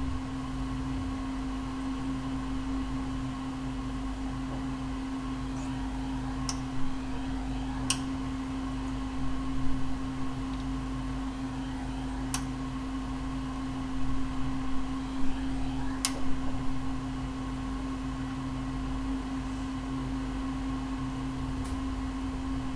{"title": "Poznan, living room - radiator language when set to 4", "date": "2012-10-27 20:24:00", "description": "radiator makes a whole array of sounds - a high pitched whine, modulated swoosh, squirts and cracks + you get to hear evening rumble form other apartments.", "latitude": "52.46", "longitude": "16.90", "altitude": "97", "timezone": "Europe/Warsaw"}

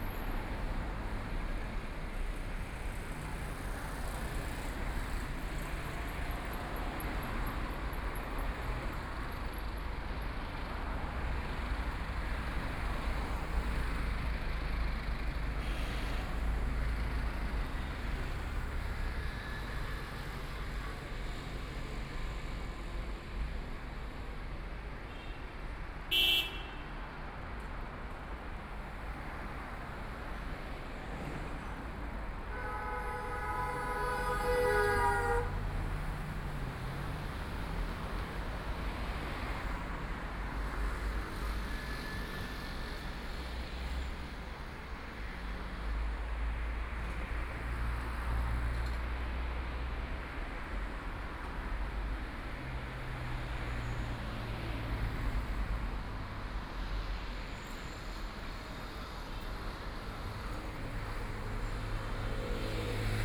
{"title": "國順東路, Yangpu District - walking in the Street", "date": "2013-11-22 17:20:00", "description": "Walking in the street, Traffic Sound, Binaural recording, Zoom H6+ Soundman OKM II", "latitude": "31.29", "longitude": "121.52", "altitude": "7", "timezone": "Asia/Shanghai"}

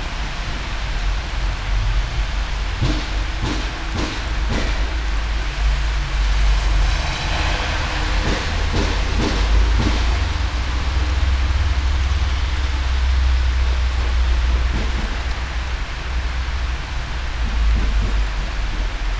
{"title": "all the mornings of the ... - aug 24 2013 saturday 08:49", "date": "2013-08-24 08:49:00", "latitude": "46.56", "longitude": "15.65", "altitude": "285", "timezone": "Europe/Ljubljana"}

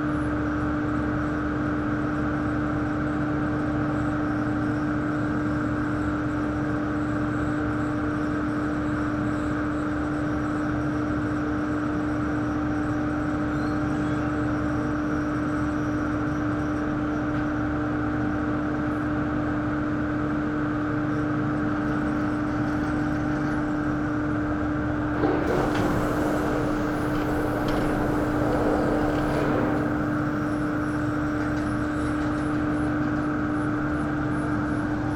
{"title": "Maribor, Pohorje, Bellevue - gondola station", "date": "2011-11-21 14:55:00", "description": "gondola station at work. the cable car at 1000m goes down to maribor", "latitude": "46.52", "longitude": "15.58", "altitude": "1046", "timezone": "Europe/Ljubljana"}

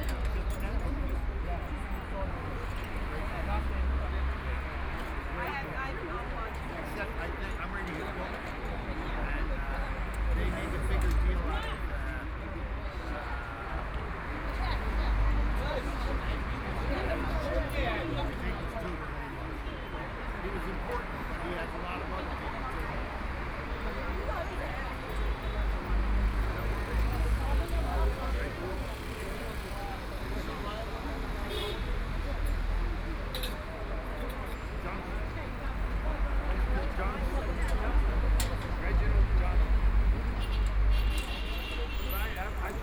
{"title": "Fangbang Road, Shanghai - at the intersection", "date": "2013-12-03 13:39:00", "description": "The crowd gathered at the intersection of voice conversations, Traffic Sound, Binaural recording, Zoom H6+ Soundman OKM II", "latitude": "31.22", "longitude": "121.48", "altitude": "14", "timezone": "Asia/Shanghai"}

